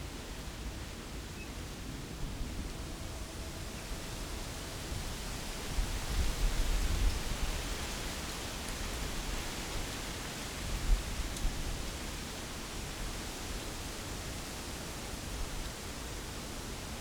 Nangang District, Taipei - Afternoon in the mountains
Afternoon in the mountains, Rode NT4+Zoom H4n
6 March 2012, ~14:00